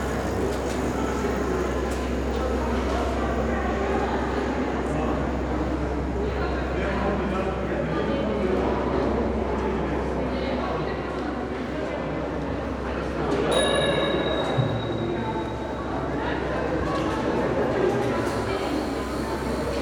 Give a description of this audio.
Hamburg, St.Pauli ALter Elbtunnel, a short walk downstairs, a few steps into the tunnel, then back and up with one of the larger elevators, (Sony PCM D50, Primo EM272)